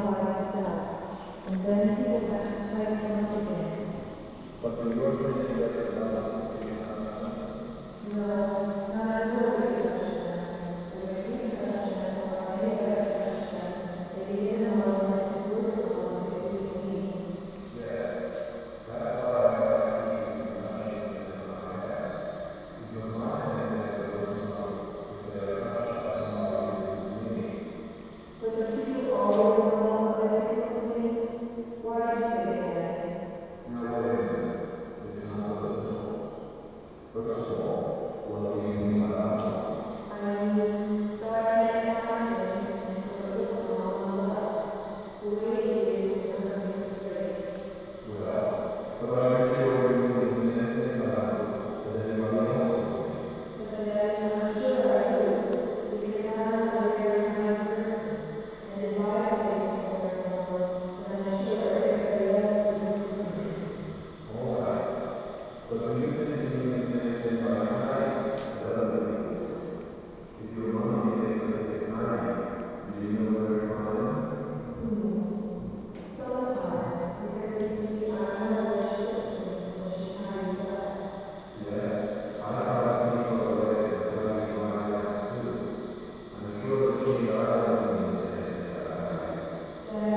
Strada Izvor, București, Romania - exhibtion in the center for contemporary art
Ambience inside the exhibition with Gary Hills and Popilotti Rist pieces